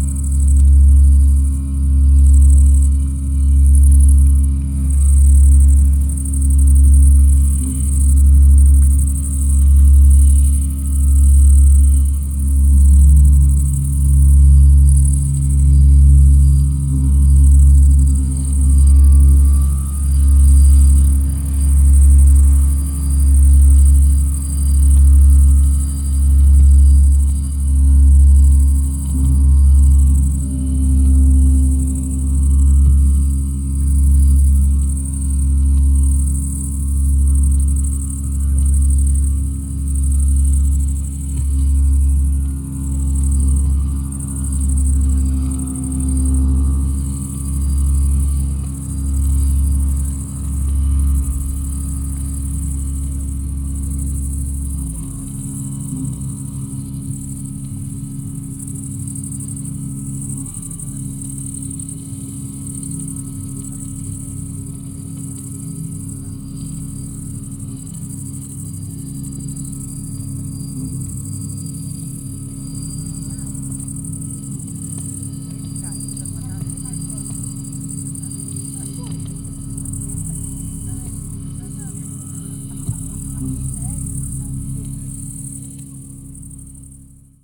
Mt Ithome Monastery, Messini, Greece - Black Seas Messene on Mt Ithome
Final performance of Tuned City Ancient Messene in front of the monastery on Mount Ithome, Black Seas Messene by Steve Bates (CA), ILIOS (GR), Nikos Veliotis (GR), mixed with the local crickets. Olympus LS10 with primo omnis.